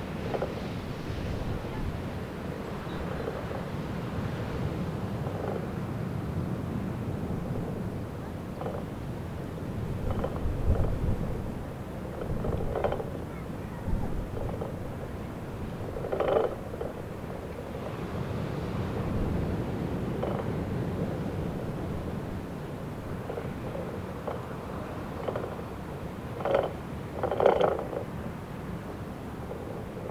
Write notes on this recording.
Waves at "île Percée", Zoom H6